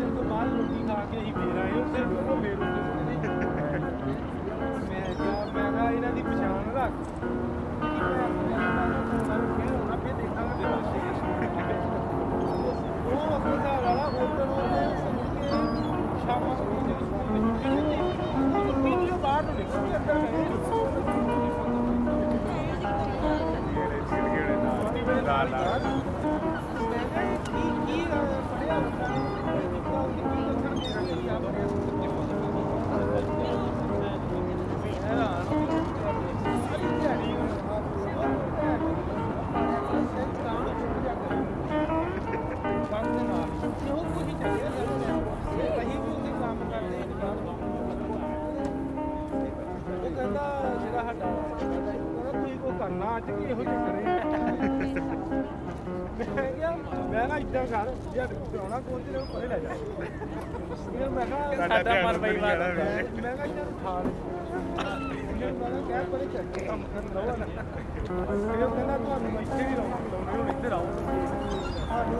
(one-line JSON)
{"title": "lisboa Portugal Praça do Comercio - Marco Alexandre by the river tejo, praça do comercio", "date": "2014-01-10 12:00:00", "description": "sitting by the river tejo listening to the seagulls the river, a acoustic guitar player. people gather in the area to relax an catch some sun while gazing at the land scape.", "latitude": "38.71", "longitude": "-9.14", "altitude": "1", "timezone": "Europe/Lisbon"}